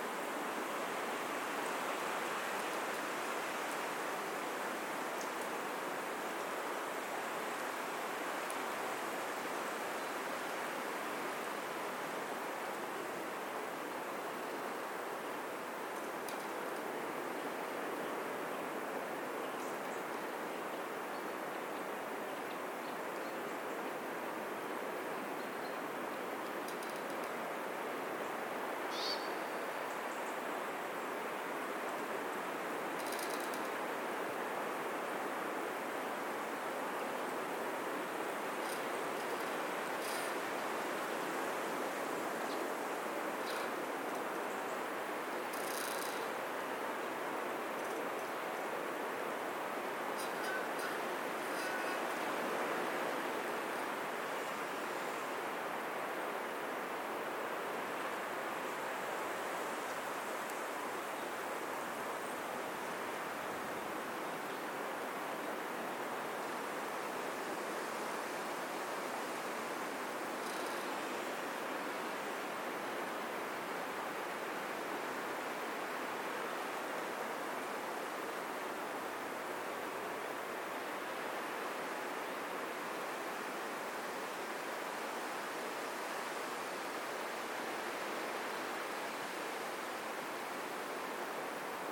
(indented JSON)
{
  "title": "Gotska Sandön, Sweden - Trees creaking",
  "date": "2016-10-20 09:35:00",
  "description": "A set of recordings made in one autumn morning during a work stay in the northwest coast of the uninhabited island of Gotska Sandön, to the east of Gotland, Sweden. Recorded with a Sanken CSS-5, Sound devices 442 + Zoom H4n.\nMost of the tracks are raw with slight level and EQ corrective adjustments, while a few others have extra little processing.",
  "latitude": "58.39",
  "longitude": "19.20",
  "altitude": "17",
  "timezone": "Europe/Stockholm"
}